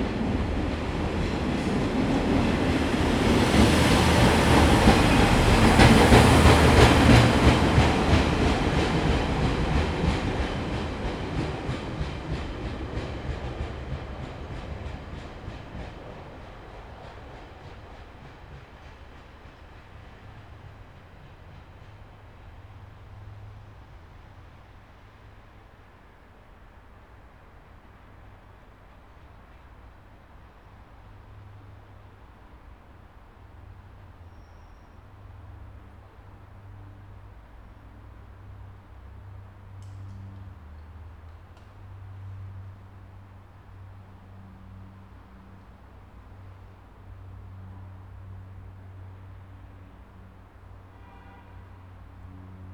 Wakefield Westgate train station, Wakefield, UK - Wakefield Westgate station
Sitting on the platform, waiting for a train.